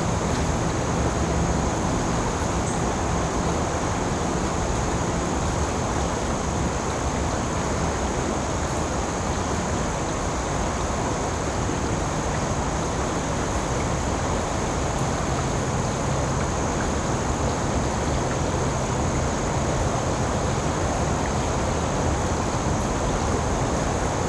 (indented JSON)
{
  "title": "Palisades W Trail, Atlanta, GA, USA - Calm River",
  "date": "2020-10-01 16:42:00",
  "description": "A calm section of the Chattahoochee river. Water and insects are audible throughout the recording. There's a constant hum of traffic in the background due to close proximity to the highway.\nRecorded with the unidirectional microphones of the Tascam Dr-100miii. Minor EQ was done in post to reduce rumble.",
  "latitude": "33.88",
  "longitude": "-84.44",
  "altitude": "237",
  "timezone": "America/New_York"
}